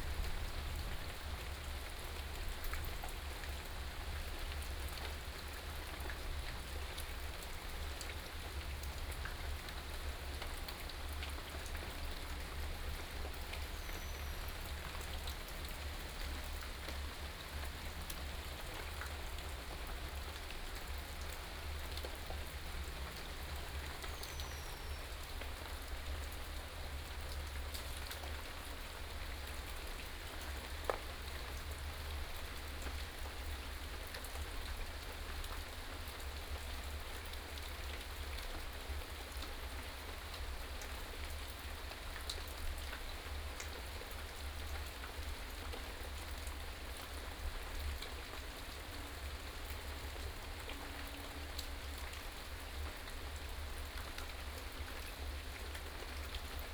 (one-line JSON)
{"title": "富陽自然生態公園, Taipei City - Rain", "date": "2015-07-04 18:10:00", "description": "in the Park, Rain, In abandoned military tunnel, Thunder", "latitude": "25.02", "longitude": "121.56", "altitude": "24", "timezone": "Asia/Taipei"}